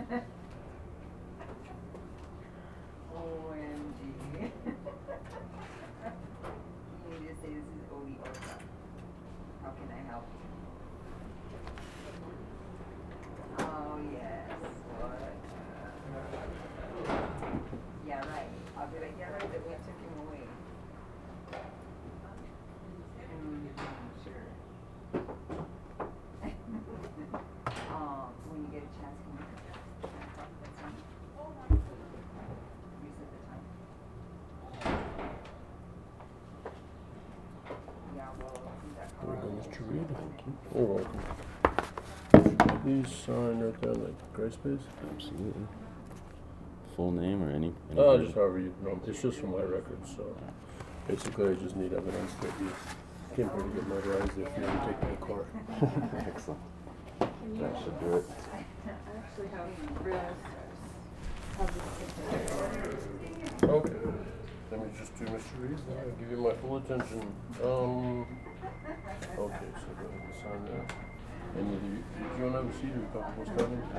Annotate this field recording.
ekalos also has need of the notary public stamp of approval, so chinqi listens and records as we TCB. "lets do some notarizing..." some loud laughter and conversations from mr. vaughn's coworkers... the trip was a wild success!